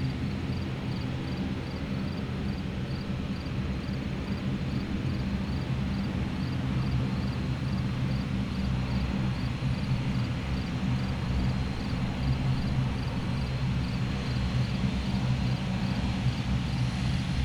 Lakeshore Ave, Toronto, ON, Canada - Two planes
Starts with crickets; later two DeHavilland Dash 8 aircraft take off in rapid succession.
Toronto, Ontario, Canada, 29 August